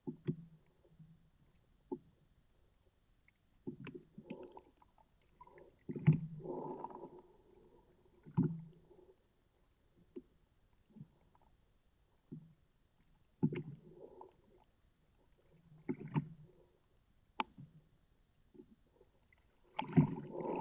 Asker, Norway, contact mic on pole base